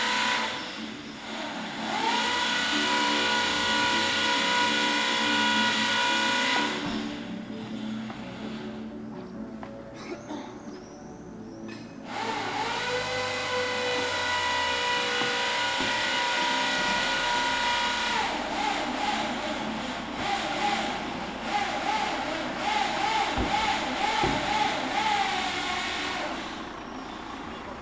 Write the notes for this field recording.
today is Lithuanias National Day. some concert heard in the distance and people still working at home...